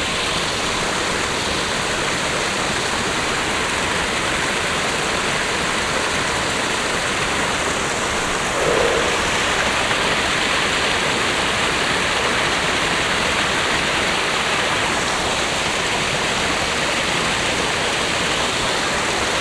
vancouver, grouse mountain, vivid fast stream